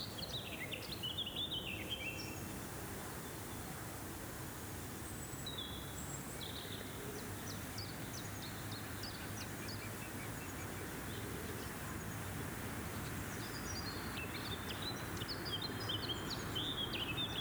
Aufnahme in den Wiesen des Marienburgparks an einem sonnigen, leicht windigem Tag im Frühling 22
soundmap nrw:
social ambiences, topographic field recordings